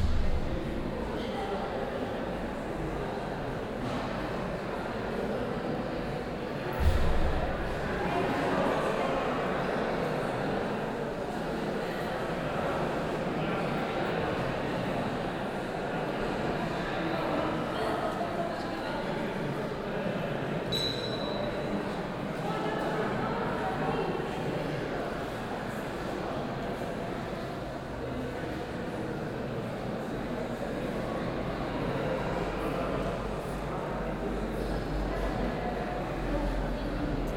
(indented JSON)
{
  "title": "cologne, alteburger wall, neues kunstforum - koeln, sued, alteburger wall, neues kunstforum 02",
  "description": "vernissagepublikum abends\nsoundmap nrw:\nsocial ambiences/ listen to the people - in & outdoor nearfield recordings",
  "latitude": "50.92",
  "longitude": "6.96",
  "altitude": "51",
  "timezone": "GMT+1"
}